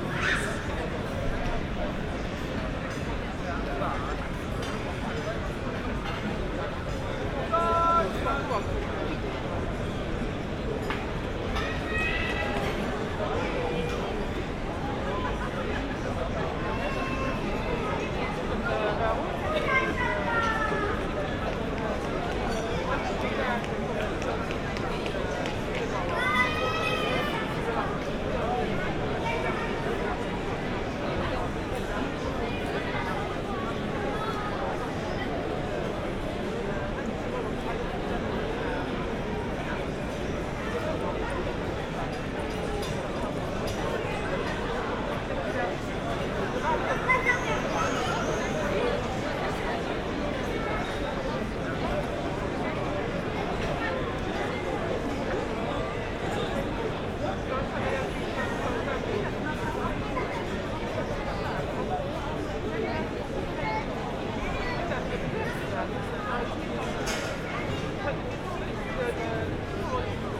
murmur of many voices, from restaurants and cafes, heard on Place d'Armes.
(Olympus LS5, Primo EM172)
Luxemburg City, Luxembourg, 4 July 2014, 21:35